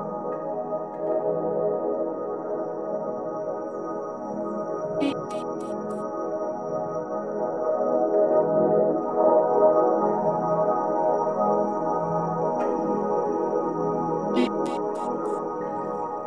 cologne, stadtgarten, parkradio installation
temporäre parkradio installation im rahmen von plan06 - artist: fs
project: klang raum garten/ sound in public spaces - in & outdoor nearfield recordings
6 May 2008, ~22:00